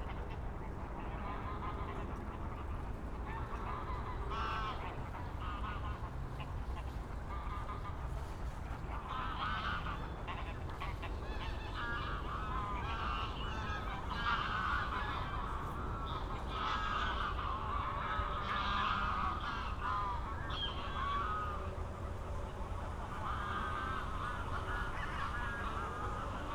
Moorlinse, Sunday afternoon in autumn, many geese gathering at the pond, cyclists and pedestrians passing by, an aircraft, a very loud car at the neary Autobahn, trains and traffic noise
(SD702, Audio Technica BP4025)
Berlin, Germany, 25 October 2020